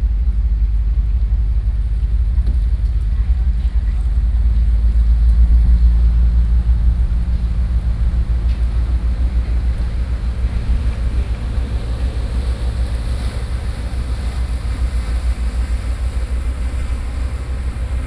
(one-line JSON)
{"title": "Keelung, Taiwan - Bisha Fishing Harbor", "date": "2012-06-24 16:33:00", "description": "Fishing boats, Traveling through, Sony PCM D50 + Soundman OKM II", "latitude": "25.15", "longitude": "121.79", "altitude": "255", "timezone": "Asia/Taipei"}